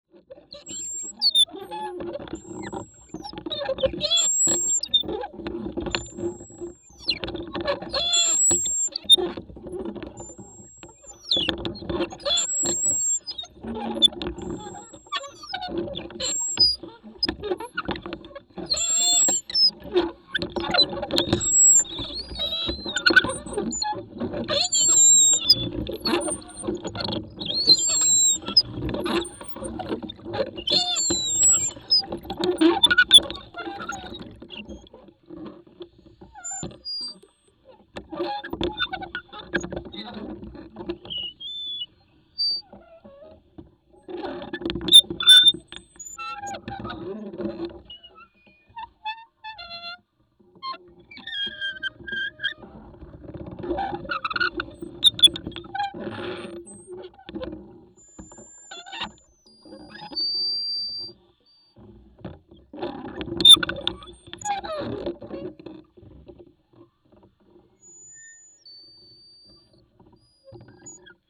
Lithuania. lake Rubikiai, a scull
recorded with contact microphones. Unnerving sound move nets of the paddle on the scull.
31 July 2011, ~16:00